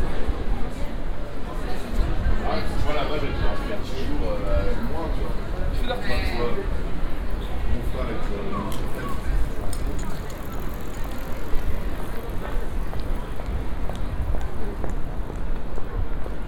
Place Charles de Gaulle, Lille, France - (406) Soundwalk around La GrandPlace in Lille
Binaural soundwalk around Place Charles de Gaulle (La Grand'Place) in Lille.
recorded with Soundman OKM + Sony D100
sound posted by Katarzyna Trzeciak
14 November 2018, ~16:00